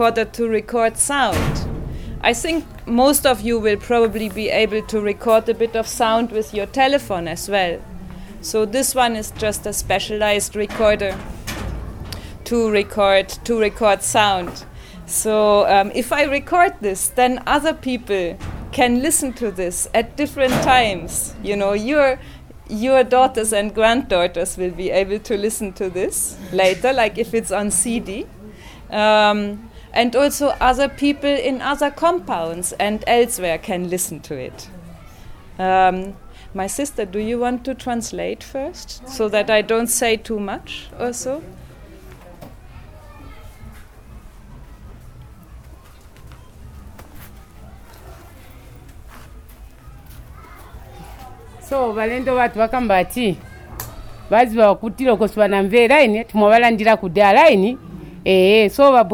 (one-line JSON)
{"title": "Chipata, Lusaka, Zambia - Ad hoc radio workshop in the yard...", "date": "2012-11-30 10:40:00", "description": "...i took out my recorder when the women introduced us singing... and a radio workshop began...", "latitude": "-15.35", "longitude": "28.30", "altitude": "1222", "timezone": "Africa/Lusaka"}